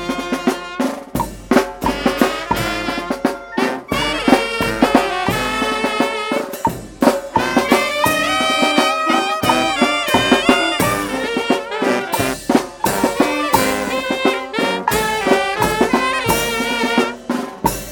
C. 14 Pte., San Miguel, Zona Arqueológica San Andrés Cholula, San Andrés Cholula, Pue., Mexique - Cholula - Mexique
Cholula - Mexique
Quelques minutes avec "Los Coyotes" - Procession en musique
Prise de sons : JF CAVR0